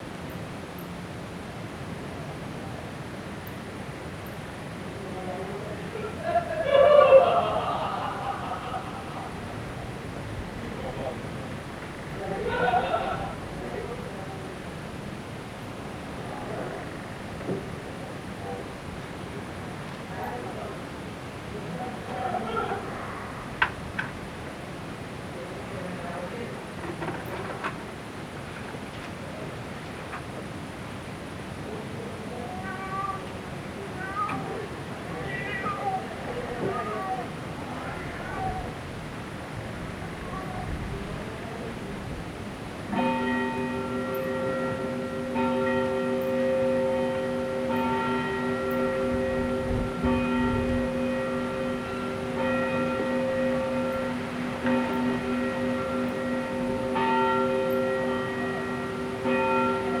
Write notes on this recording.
Ambiente nocturno en el pueblo a través de la ventana de Cal Xico. Los vecinos charlan animadamente, un gato próximo maulla. Se sienten ladridos y coches en la distancia mientras el viento agita las hojas de los árboles en la calle. El reloj de la iglesia marca la medianoche.